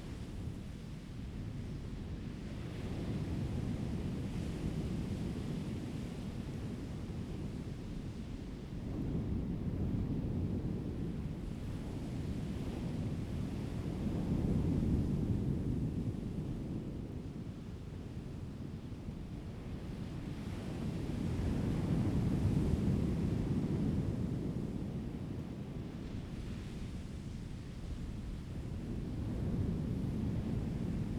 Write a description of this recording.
Sound of the waves, The weather is very hot, Circular stone coast, Zoom H2n MS +XY